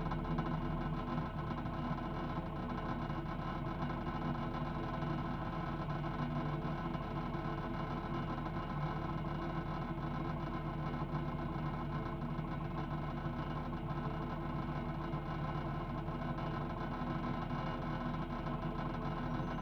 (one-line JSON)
{"title": "Capital Metro - Freeride", "date": "2021-01-27 12:57:00", "description": "Bunch of rattling\nJrF Contact Mic taped to city bus seat. Recorded to 633.", "latitude": "30.26", "longitude": "-97.71", "altitude": "148", "timezone": "America/Chicago"}